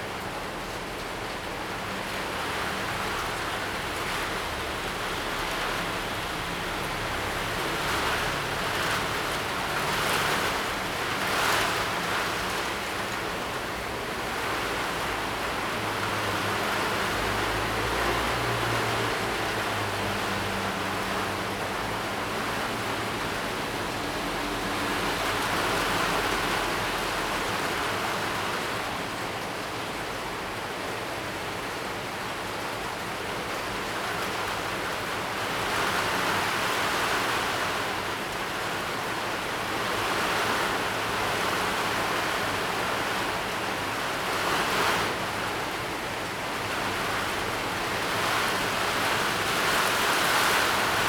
大仁街, Tamsui District - Upcoming typhoon
Upcoming typhoon, Gradually become strong wind and rain
Zoom H2n MS+XY
7 August, 21:41